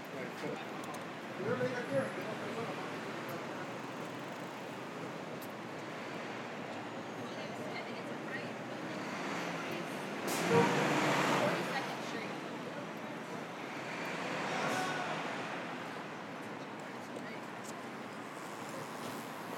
Sound of a wheeled trash can, traffic, sirens, and sidewalk constructions in Lexington Ave, Manhattan.
United States, March 2022